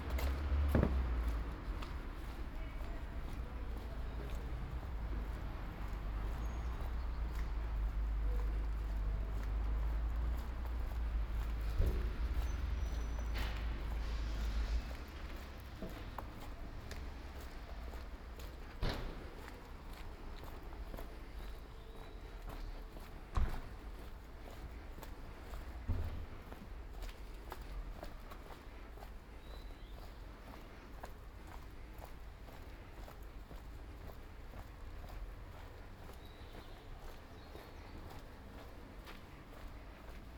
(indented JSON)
{
  "title": "Ascolto il tuo cuore, città. I listen to your heart, city. Several chapters **SCROLL DOWN FOR ALL RECORDINGS** - Morning AR with break in the time of COVID19 Soundwalk",
  "date": "2020-04-16 07:36:00",
  "description": "\"47-Morning AR with break in the time of COVID19\" Soundwalk\nChapter XLVII of Ascolto il tuo cuore, città. I listen to your heart, city\nThursday April 16th 2020. Round trip through San Salvario district, the railway station of Porta Nuova and Corso Re Umberto, thirty seven days after emergency disposition due to the epidemic of COVID19.\nRound trip are two separate recorded paths: here the two audio fils are joined in a single file separated by a silence of 7 seconds.\nFirst path: beginning at 7:36 a.m. duration 20’43”\nsecond path: beginning at 8:26 a.m. duration 34’20”\nAs binaural recording is suggested headphones listening.\nBoth paths are associated with synchronized GPS track recorded in the (kmz, kml, gpx) files downloadable here:\nfirst path:\nsecond path:",
  "latitude": "45.06",
  "longitude": "7.68",
  "altitude": "249",
  "timezone": "Europe/Rome"
}